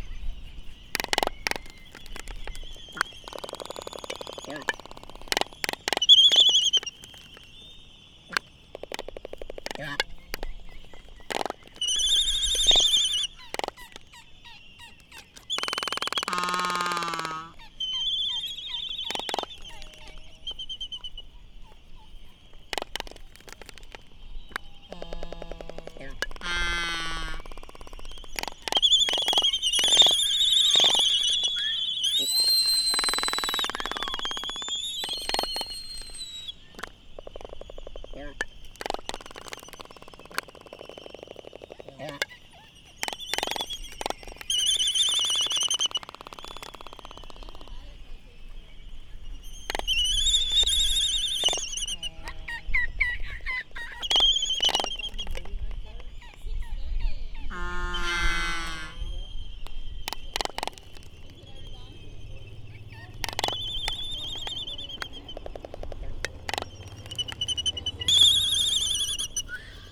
United States Minor Outlying Islands - Laysan albatross dancing ...
Laysan albatross dancing ... Sand Island ... Midway Atoll ... bird calls ... Laysan albatross ... red-tailed tropic birds ... open lavalier mics on mini tripod ... background noise ... some windblast ... traffic ... voices ...
16 March 2012, ~19:00